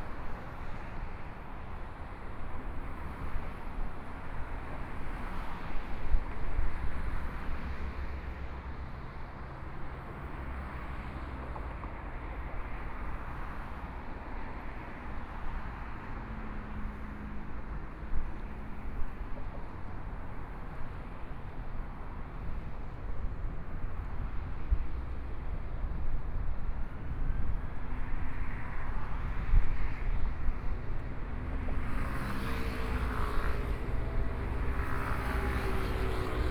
Dazhi Bridge, Taipei City - Walking on the bridge
Traffic Sound, Walking on the bridge, Sunny mild weather
Please turn up the volume
Binaural recordings, Zoom H4n+ Soundman OKM II
16 February 2014, 17:39